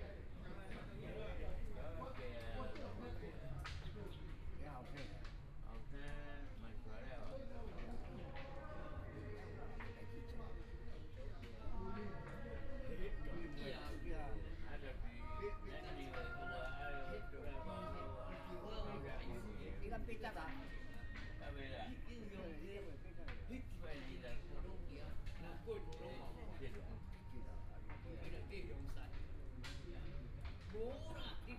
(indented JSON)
{
  "title": "Liyu (Carp) Mountain Park, Taitung - in the Park",
  "date": "2014-01-16 10:52:00",
  "description": "Dialogue among the elderly, Singing sound, Old man playing chess, Binaural recordings, Zoom H4n+ Soundman OKM II ( SoundMap2014016 -6)",
  "latitude": "22.75",
  "longitude": "121.14",
  "timezone": "Asia/Taipei"
}